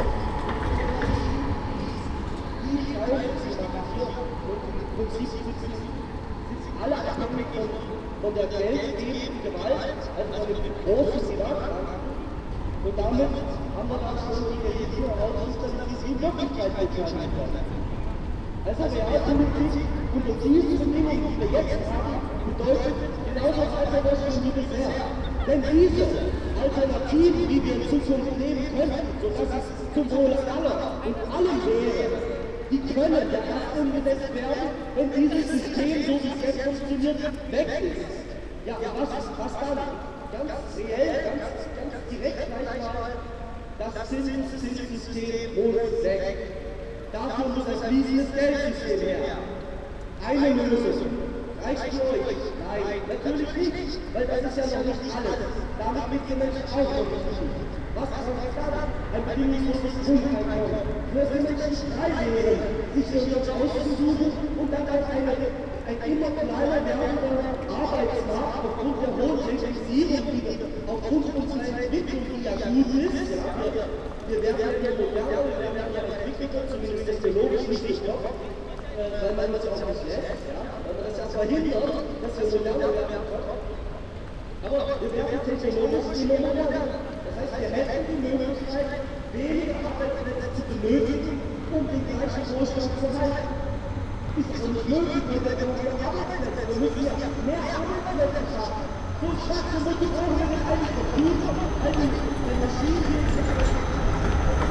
Marktplatz, Halle (Saale), Deutschland - echos of a Monday demonstration
Sound of a right wing party gathering (Montagsdemo) on Marktplatz, Halle. Only a few people are there, and what they say is hardly to understand because of the great echos between the church and surrounding houses. Trams also disturb their speeches periodically. It's cold and it rains
(Sony PCM D50, Primo EM172)
Halle (Saale), Germany, 24 October 2016, 19:45